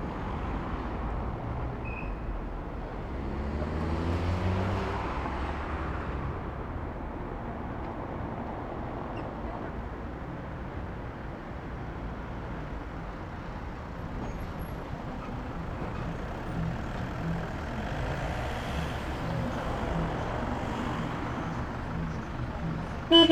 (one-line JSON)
{"title": "Berlin: Vermessungspunkt Maybachufer / Bürknerstraße - Klangvermessung Kreuzkölln ::: 08.10.2010 ::: 10:35", "date": "2010-10-08 10:35:00", "latitude": "52.49", "longitude": "13.43", "altitude": "39", "timezone": "Europe/Berlin"}